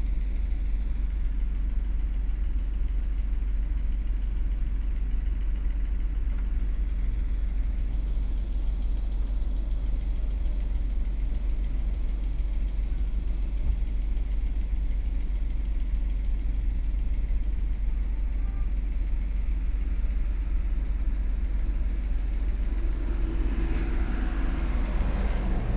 梗枋漁港, 頭城鎮更新里 - In the fishing port
In the fishing port, Traffic Sound, Birdsong sound, Hot weather, Sound of Factory